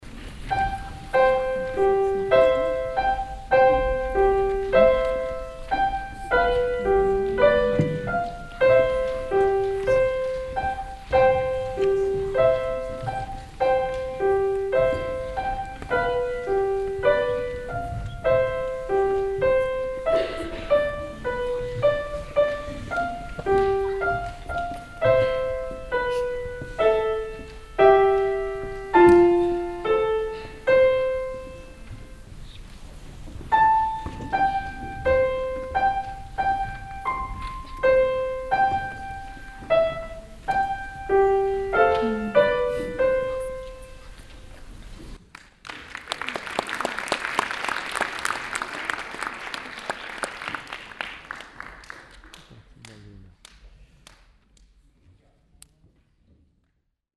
refrath, waldorfschule, aula, vorspiel - refrath, waldorfschule, aula, vorspiel 02
alljährliches klavier vorspiel der Klavierschüler in der schulaula.hier: die weihnachtslieder auswahl
soundmap nrw - weihnachts special - der ganz normale wahnsinn
social ambiences/ listen to the people - in & outdoor nearfield recordings